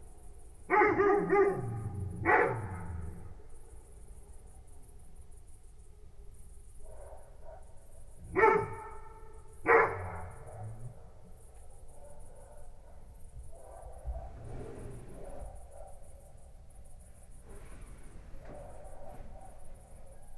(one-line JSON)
{"title": "Pod Lipą, Borsuki, Poland - (835b AB) Crickets into dogs", "date": "2021-08-21 01:10:00", "description": "Overnight recording caught an interesting transition from crickets to dogs barking (no edit has been made).\nRecorded in AB stereo (17cm wide) with Sennheiser MKH8020 on Sound Devices MixPre6-II", "latitude": "52.28", "longitude": "23.10", "altitude": "129", "timezone": "Europe/Warsaw"}